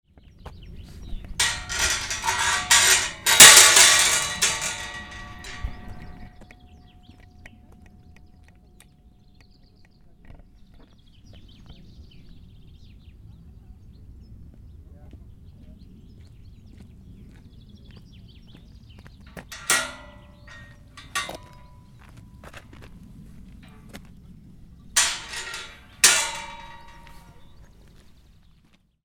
Abschrankungen der Kuhweiden auf dem Weg zur Wasserfallen und der einzigen Luftseilbahn im Baselland